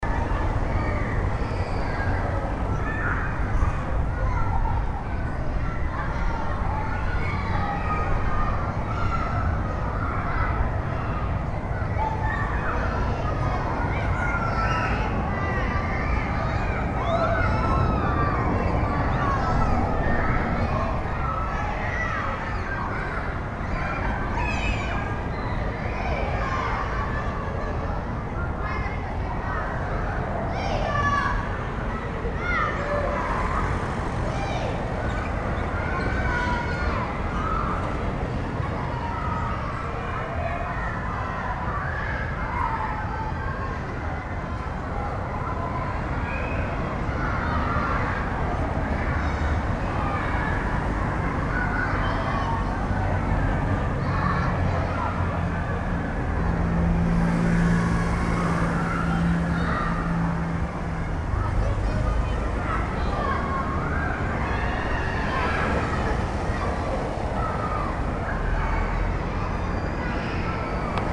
Canada Nova de St.ª Luzia, Angra do Heroísmo, Portugal - Escola Alto das Covas
These recordings are part of the Linschoten Workshop, a work done with the students of the Francisco Drummond school of eighth year.
A sound landscape workshop with which a mapping has been made walking the city of Angra do Heroísmo, a world heritage site, through the Linschoten map, a map of the XVi century, which draws the Renaissance city. With the field recordings an experimental concert of sound landscapes was held for the commemorations of UNESCO. 2019. The tour visits the city center of Angra. Jardim Duque da Terceira, Praça Velha, Rua Direita, Rua São João, Alfandega, Prainha, Clube Náutico, Igreja da Sé, Igreja dos Sinos, Praça Alto das Covas, Mercado do Duque de Bragança-Peixeria.
Recorded with Zoom Hn4pro.
*This is the sound of kids playing arround at school.
8 November 2019, 09:30